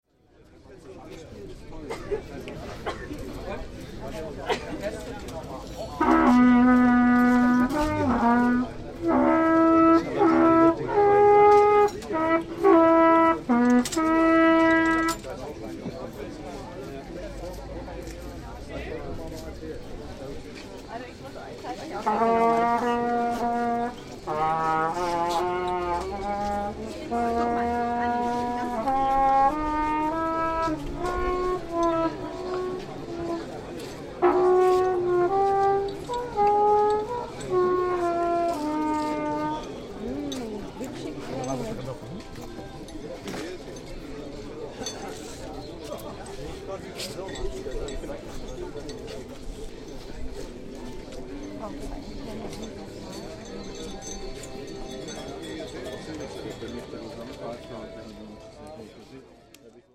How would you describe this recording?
fleemarket Arkonaplatz, 8.6.2008, 13:30: young man is going to try & buy an old trumpet.